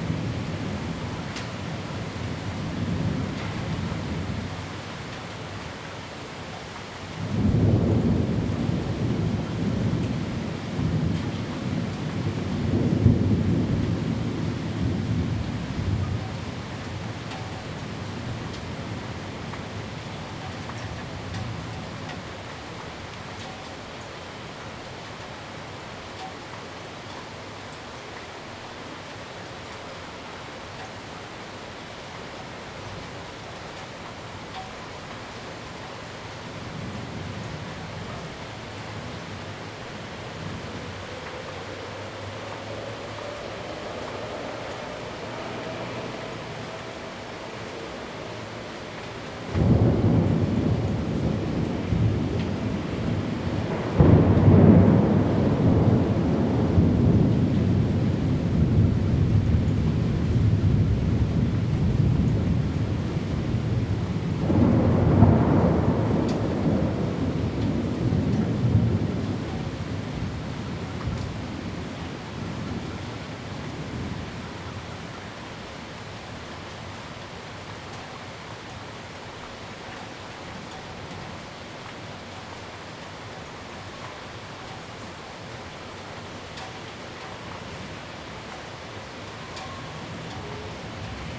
Rende 2nd Rd., Bade Dist. - Afternoon thunderstorms
Afternoon thunderstorms
Zoom H2n MS+XY+ Spatial audio